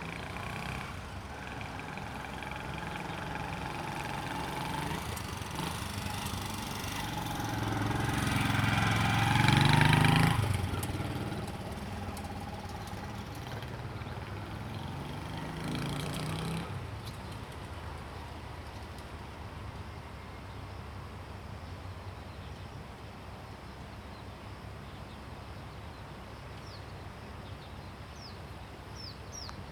TaoMi River, Puli Township - Next to the river
Bird calls, sound of water streams
Zoom H2n MS+XY